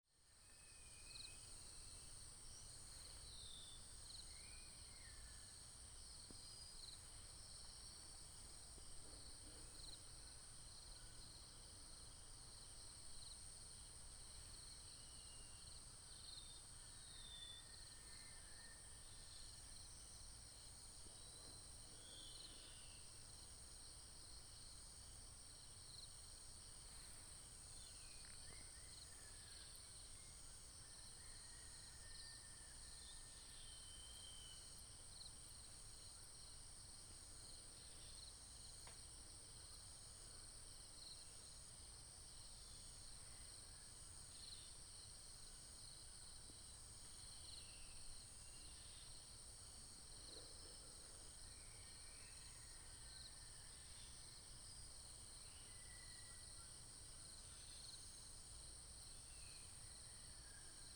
獅潭鄉中豐公路, Miaoli County - Early in the morning
Early in the morning next to the road, Insects, Chicken cry, Binaural recordings, Sony PCM D100+ Soundman OKM II